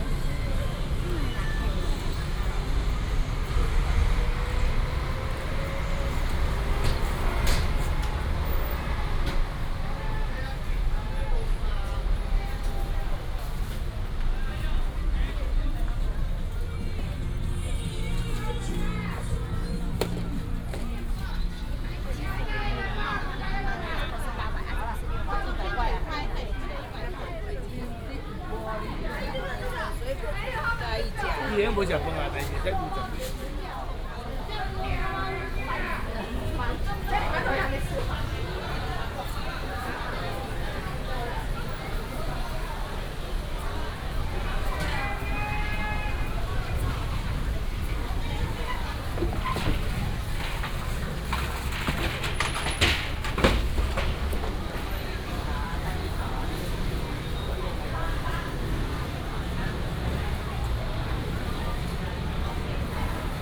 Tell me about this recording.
Walking in the market district, Traffic sound, Street vendors selling sounds